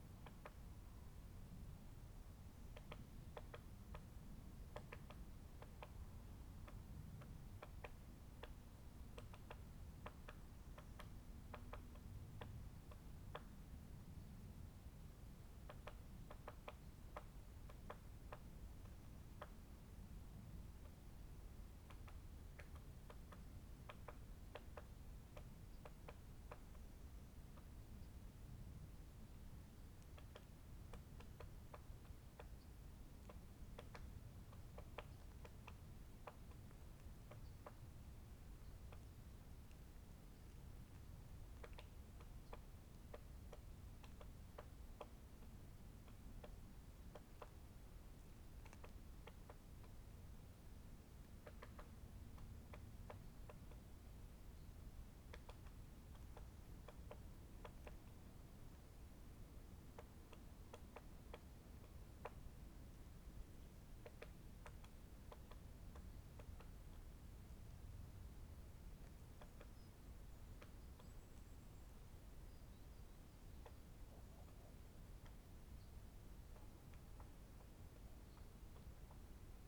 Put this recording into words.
the city, the country & me: january 4, 2014